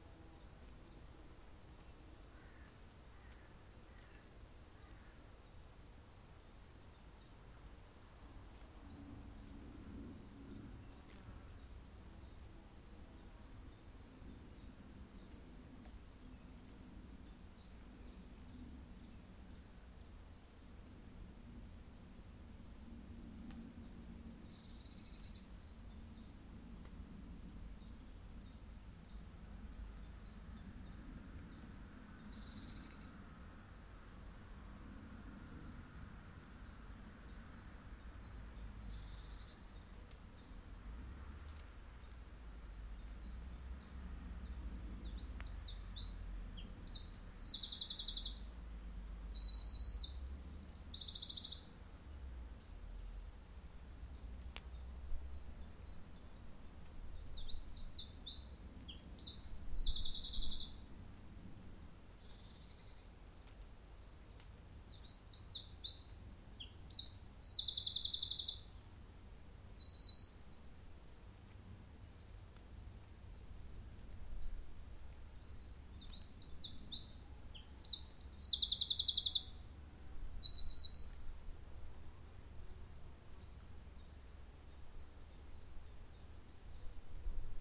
Haldon Belvedere, Exeter, UK - Haldon Belvedere- Devon Wildland
This recording was made using a Zoom H4N. The recorder was positioned in the bracken and rowan and beech woods just to the North of the Haldon Belvedere- Lawrence Castle. It had just stopped raining. This recording is part of a series of recordings that will be taken across the landscape, Devon Wildland, to highlight the soundscape that wildlife experience and highlight any potential soundscape barriers that may effect connectivity for wildlife.